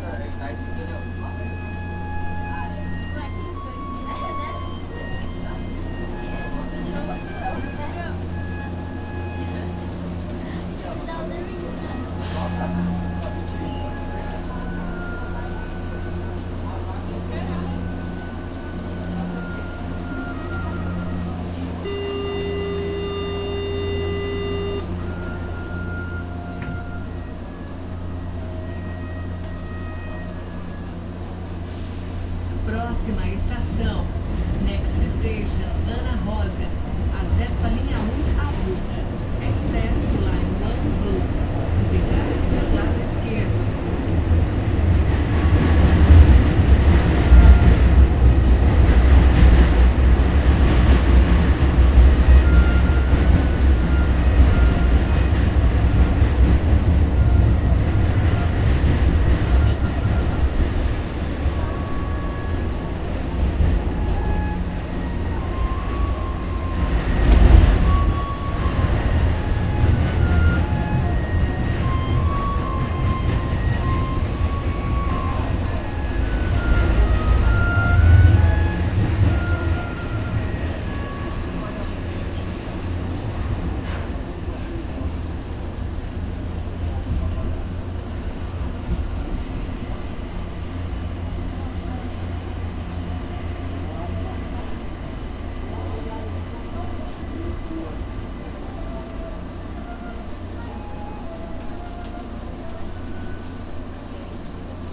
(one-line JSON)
{"title": "R. Vergueiro - Jardim Vila Mariana, São Paulo - SP, 04101-300, Brasil - São Paulos Subway", "date": "2018-10-03 13:47:00", "description": "Inside the São Paulo subway train, between the Trianon-masp and Cháraca klabin stations. Recorded with TASCAM DR-40 with internal microphones", "latitude": "-23.59", "longitude": "-46.63", "altitude": "787", "timezone": "America/Sao_Paulo"}